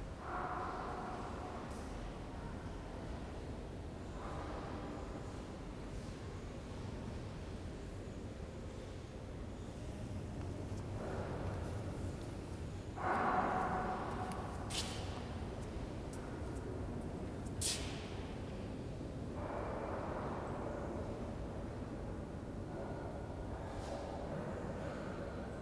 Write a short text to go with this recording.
Ambience of St Denis. Restoration work on the south transept, visitors talking and milling around taking photos the edges of all softened by the live acoustic. Recorded using the internal microphones of a Tascam DR-40.